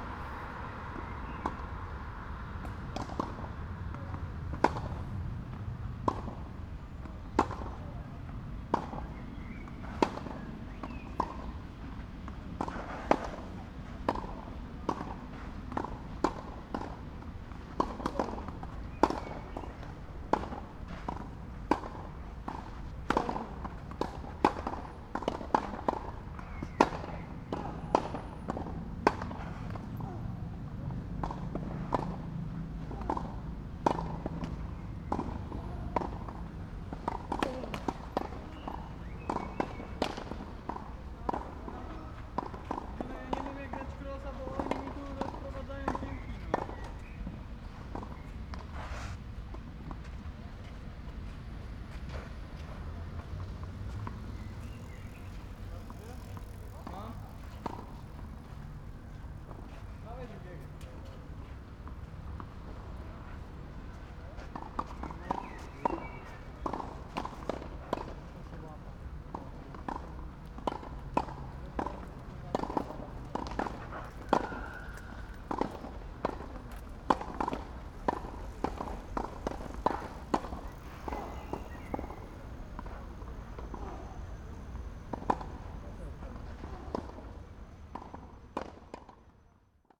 Poznan, downtown, Nosowskiego street, tenis courts - afternoon practice
tennis ball pop right left right, players groaning when smashing the ball
Polska, European Union, 8 July